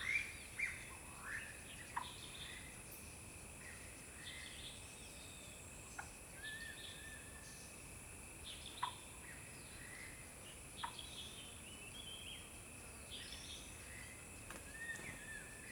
種瓜路, 桃米里 Puli Township - Bird sounds
Face to the woods, Bird sounds
Zoom H2n MS+XY
Puli Township, Nantou County, Taiwan, 5 May 2016, ~11:00